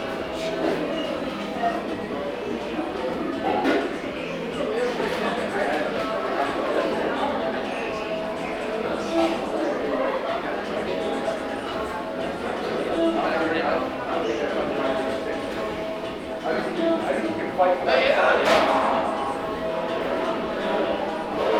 S Clinton St, Iowa City, IA, USA - Downtown chipotle
radio, conversation, restaurant